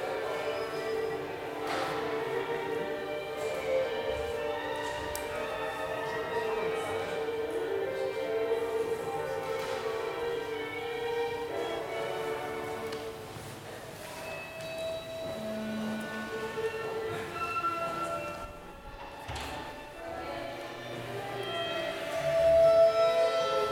Martin Buber St, Jerusalem - Corridor at Bezalel Academy of Art and Design.
Bezalel Academy of Art and Design.
Gallery, corridor, Sound work.